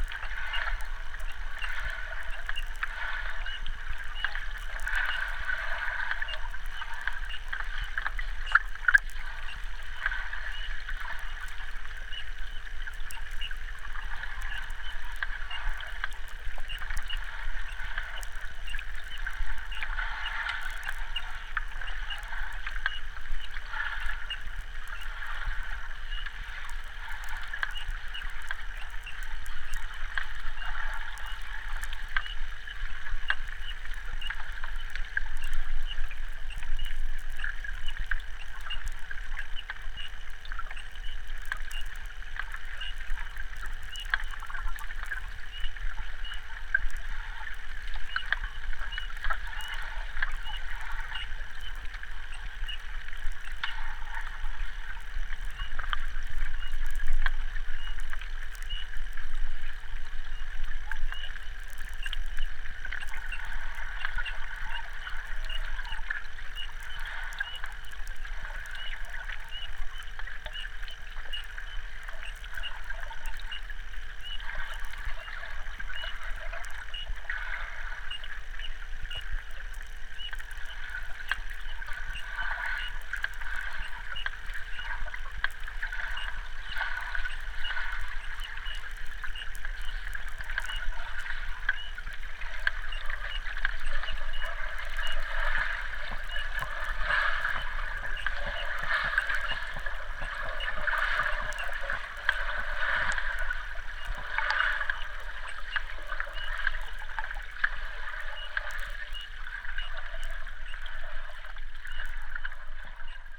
Palūšė, Lithuania, study of inaudible
two hydrophones underwater and electromagnetic antenna Priezor in the air